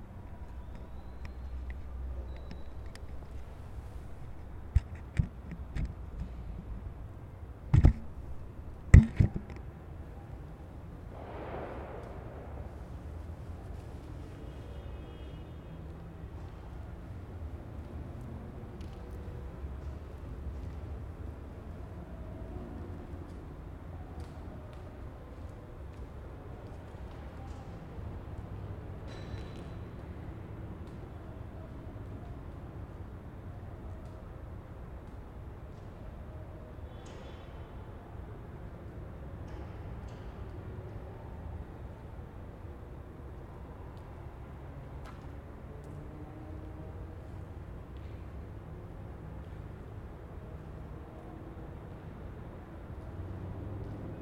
Cine Ópera, Col. San Rafael - Interior Cine Ópera
Grabación realizada al interior de la nave principal del legendario Cine Ópera, actualmente abandonado. El objetivo era registrar ecos de la memoria sonora del lugar, capturar el "silencio" y la resonancia de sonidos del exterior. Se logran escuchar pasos explorando el impresionante lugar, ya vacío de butacas o vestigios de lo que llegó a ser. Al final del track las campanas de la iglesia de San Cosme y Damián se hacen presentes señalando la hora, así como el tronido del cielo anunciando posible lluvia.